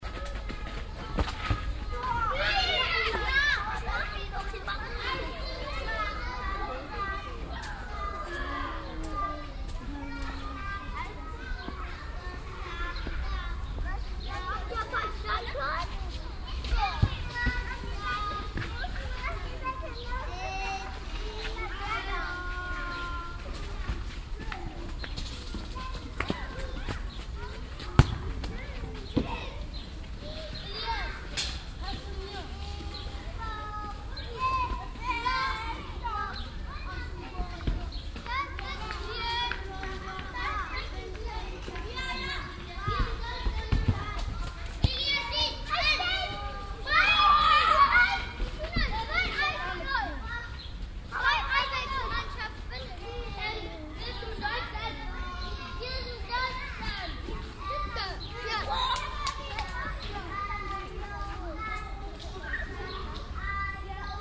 soundmap: köln/ nrw
rollender ball, kinderstimmen, mittags am kindergarten - karl korn strasse
project: social ambiences/ listen to the people - in & outdoor nearfield recordings
karl-korn str, 1 June 2008, 09:00